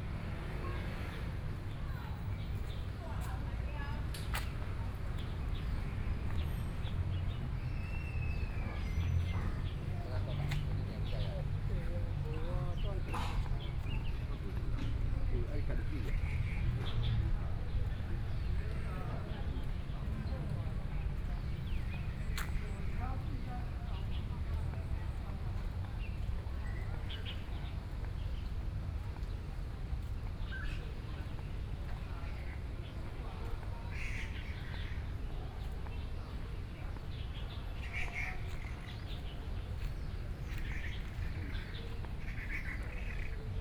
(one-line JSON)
{"title": "Zhongshan Park, 羅東鎮集祥里 - Walking through the park", "date": "2014-07-27 10:50:00", "description": "Walking through the park, Traffic Sound, Birdsong sounds\nSony PCM D50+ Soundman OKM II", "latitude": "24.68", "longitude": "121.77", "altitude": "12", "timezone": "Asia/Taipei"}